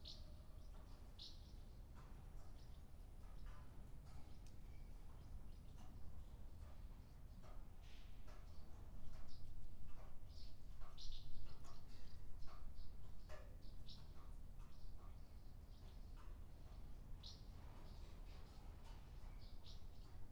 Buzludzha, Bulgaria, inside - Buzludzha, Bulgaria
Inside the monument of Buzludzha, a ruin of socialist architecture, the roof is incomplete, a lot of rubble lay around, swallows made their nests... the recording is rather quiet, the microphones stood on a remote place since the wind was quite heavy in this building on this peak of a mountain
Стара Загора, Бългaрия, 16 July 2019, 13:18